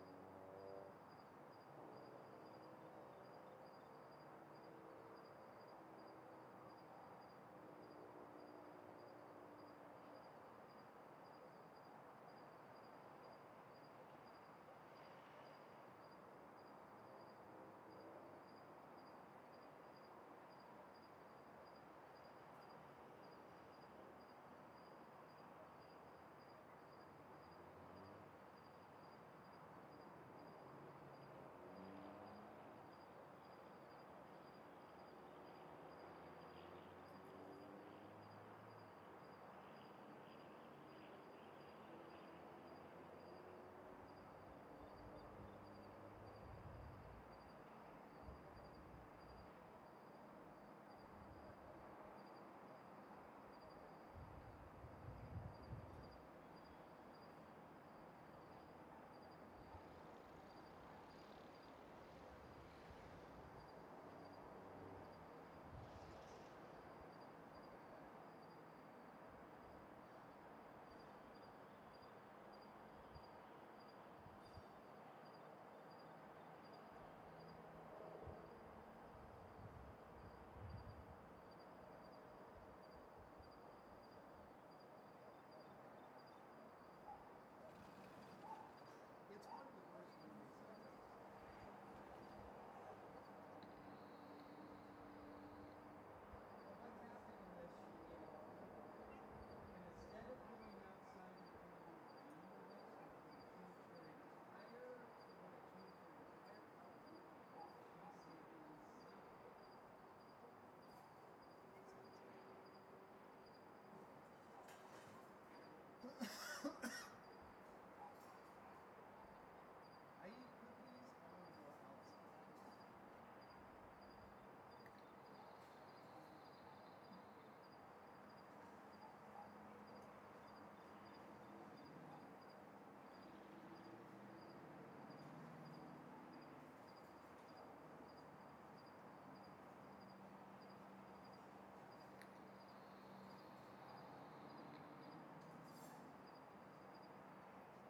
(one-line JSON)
{"title": "Azuay, Ecuador - Chauyabamba Night Ambiance", "date": "2015-11-04 20:29:00", "description": "Left my recorder out on the patio one night. this is the best 25min out of about an hour of recording time.", "latitude": "-2.86", "longitude": "-78.91", "altitude": "2415", "timezone": "America/Guayaquil"}